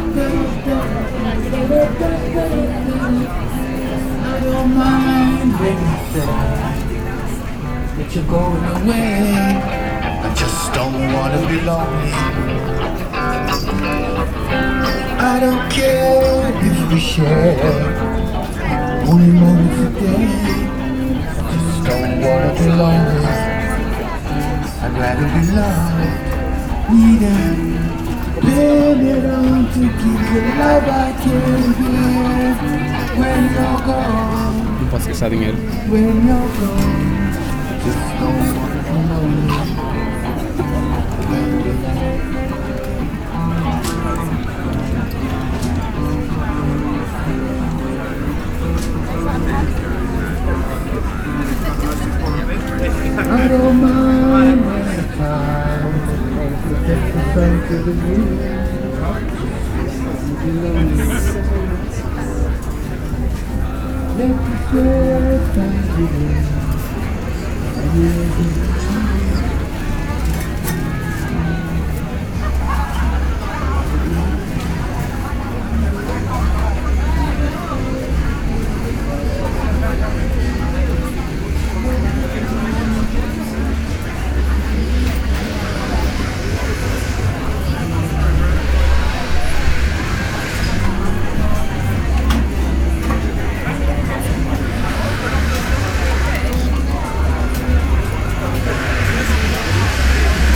Broadway Market Atmosphere - Hackney Broadway Market, London, UK
A wander along the Broadway Market and back.